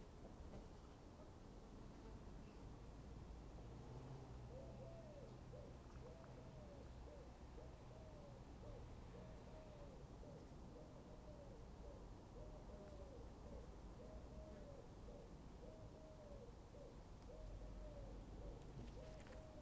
This is the sound of my two remaining naughty ducks, Honey and Pretzel. Sadly Bonbon is no longer with us. But as you can hear, the other two make up for it with extra quacking. Every day I give them clean water (which they destroy instantly) and some food pellets (which they sometimes eat, but sometimes they forget because they are too busy eating insects instead). I also periodically empty out their paddling pool, scrub all the poo and algae off it, and refresh it with clean water (which they destroy instantly... do you sense a theme?) They quack almost constantly and I love the sound. They have a very noisy, alarmed sort of sound which they direct at us and which you can hear here, but then also they have this little chuntering duck banter which they seem to do just between themselves. They are very rarely silent, even when they are just resting in the long grass they are muttering to each other in duck.
Reading, Reading, UK, 22 June 2016